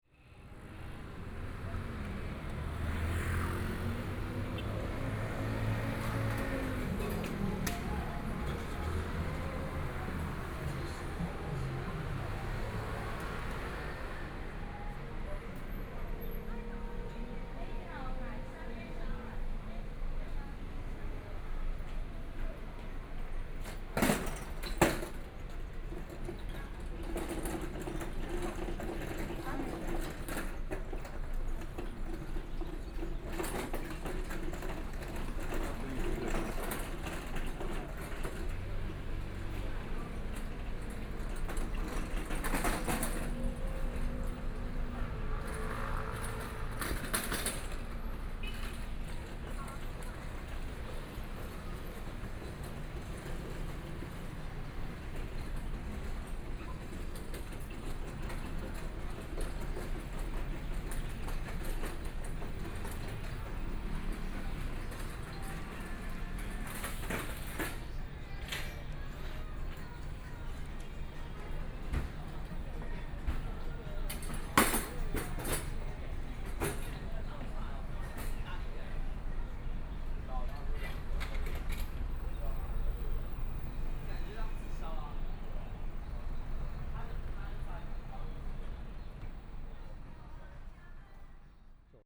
Linsen N. Rd., Taipei City - on the Road
Walking on the Road, Environmental sounds, Traffic Sound, Binaural recordings, Zoom H4n+ Soundman OKM II
Taipei City, Taiwan, 6 February 2014